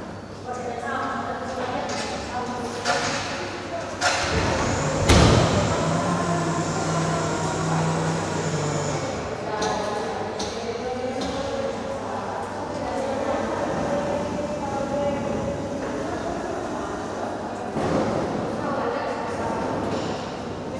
Faculdade De Belas Artes, foyer Porto, Portugal - FBAUP foyer ambience
student activity in the foyer of the Fine Arts School of Porto
Portugal, European Union, 10 April 2013